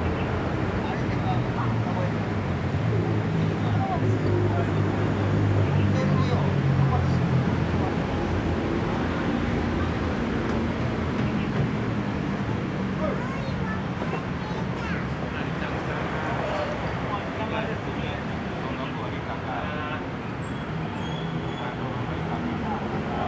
Ln., Sec., Zhongshan Rd., Zhonghe Dist. - Children Playground
Children Playground, Sitting in the river, Traffic Sound
Sony Hi-MD MZ-RH1 +Sony ECM-MS907